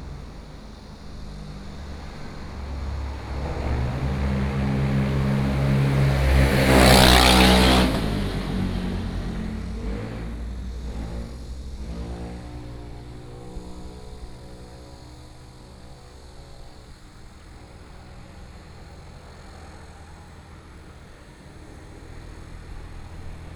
Xinming Rd., Yangmei City - Trains traveling through
Birdsong sound, Cicadas sound, Traffic Sound, Trains traveling through